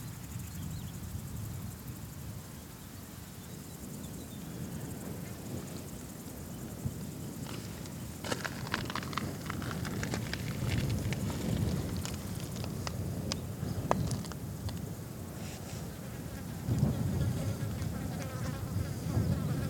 Morille-Salamanca, Art Graveyard, metal piece spinnig
Morille-Salamanca, Metal sculpture spinning, wind, birds, flies